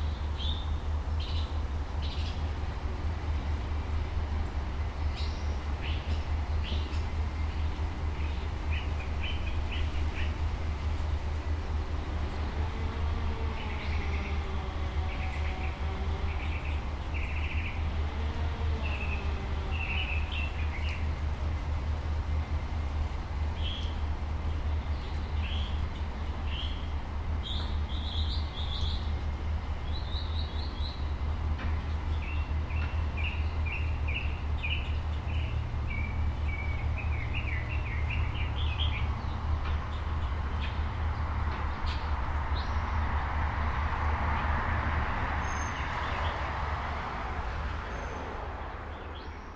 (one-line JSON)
{"title": "Green Lane, La Canada, CA - Midmorning Suburban Sounds", "date": "2018-06-20 10:30:00", "description": "Midmorning Birdsong, including a Spotted Towhee, and construction sounds in a suburban development on a south slope of the San Gabriel Mountains in California.\nSchoeps MK2 omni capsules splayed out 90 degrees into Nagra Seven.", "latitude": "34.22", "longitude": "-118.20", "altitude": "485", "timezone": "America/Los_Angeles"}